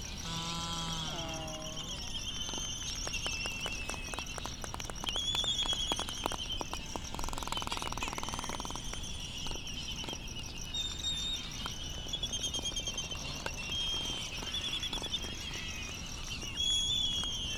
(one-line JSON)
{"title": "United States Minor Outlying Islands - Laysan albatross and Bonin petrel soundscape ...", "date": "2012-03-14 03:58:00", "description": "Laysan albatross and Bonin petrel soundscape ... Sand Island ... Midway Atoll ... laysan calls and bill clapperings ... bonin calls and flight calls ... crickets ticking ... open lavalier mics ... warm ... blustery ...", "latitude": "28.22", "longitude": "-177.38", "altitude": "9", "timezone": "Pacific/Midway"}